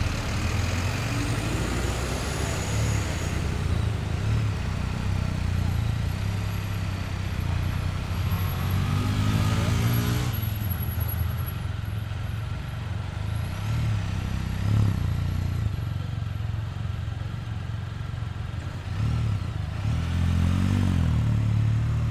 Cl., Medellín, La Candelaria, Medellín, Antioquia, Colombia - Entre las lomas y Palmas
Se escucha el flujo de vehículos en hora pico entre la Loma el Encierro y San Julián que se dirijen hacia la avenida Las Palmas.